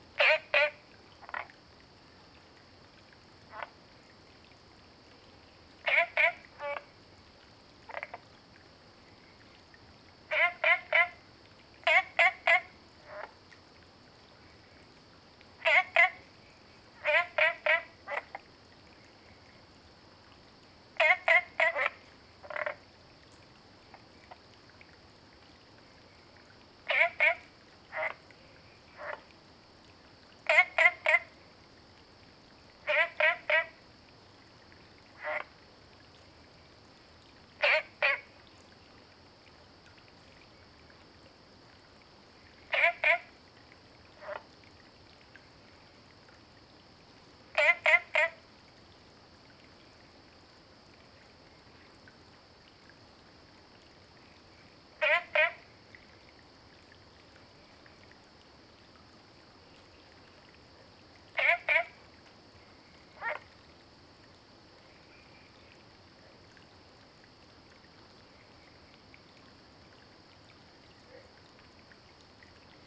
Green House Hostel, Puli Township - Early morning
Frogs chirping, at the Hostel, Early morning
Zoom H2n MS+XY
3 September 2015, 04:33